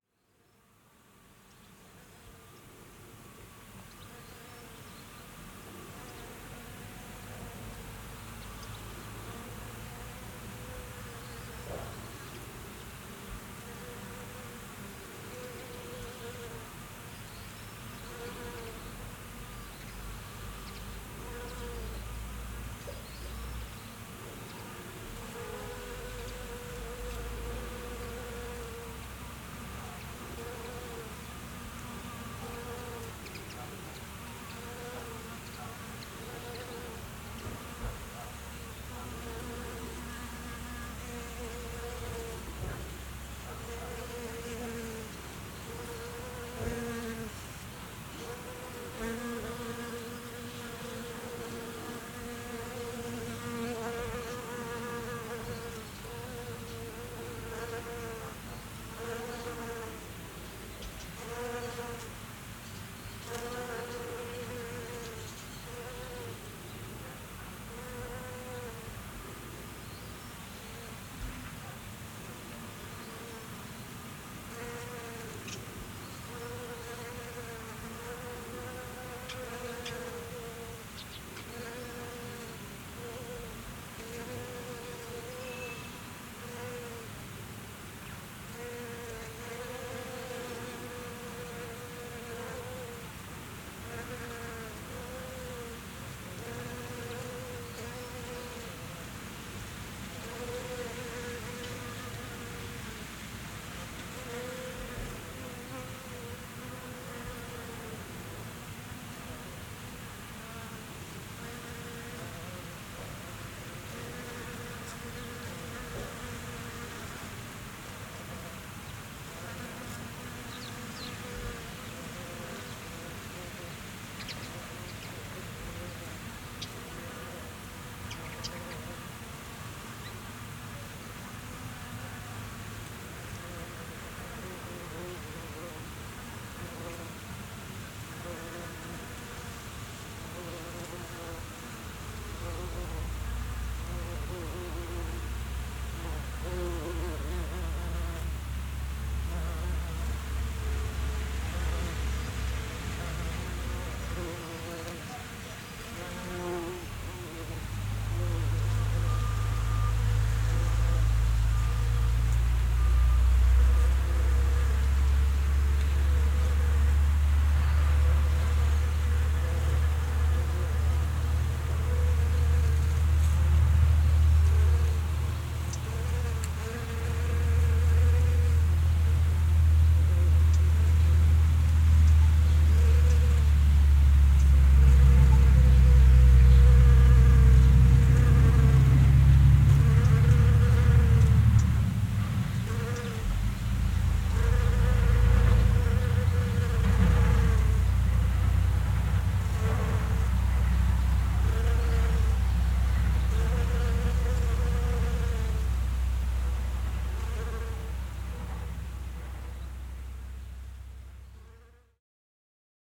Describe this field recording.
another windy day at abandoned farms